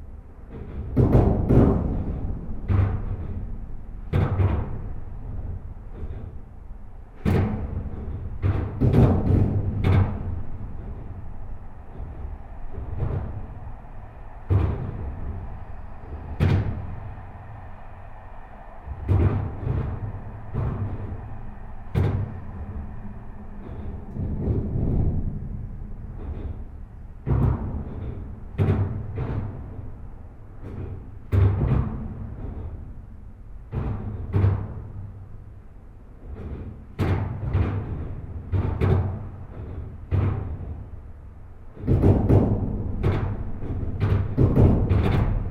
Namur, Belgique - The viaduct
This viaduct is one of the more important road equipment in all Belgium. It's an enormous metallic viaduct on an highway crossing the Mass / Meuse river.
This recording is made just below the expansion joint. Trucks make enormous impact, absorbed by special rubber piles. Feeling of this place is extremely violent.